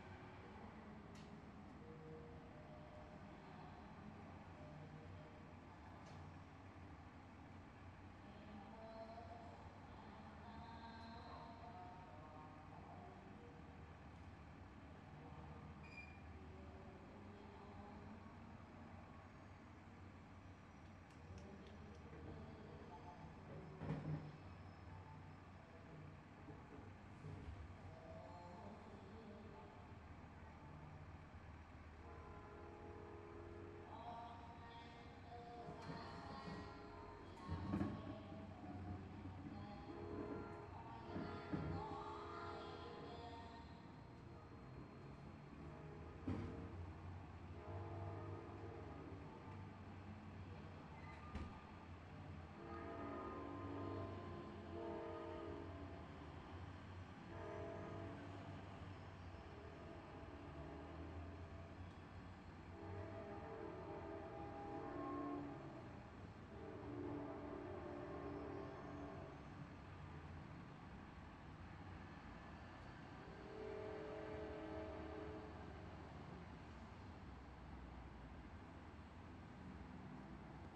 my neighbor practicing her vocal skills, funny how you could hear the occasional audio-feedback in those speakers
"What we hear is mostly noise. When we ignore it, it disturbs us. When we listen to it, we find it fascinating." John Cage from Silence